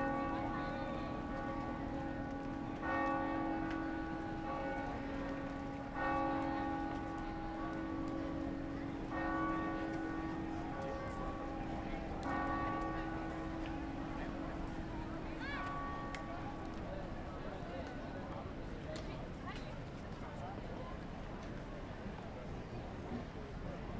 Wedding Bells, Notre-Dame de Québec Cathedral.
Zoom H4N Recorder. It is the parish church of the oldest parish in North America, and the first church in North America to be elevated to the rank of minor basilica by Pope Pius IX in 1874. It is designated a World Heritage church.
QC, Canada, July 2010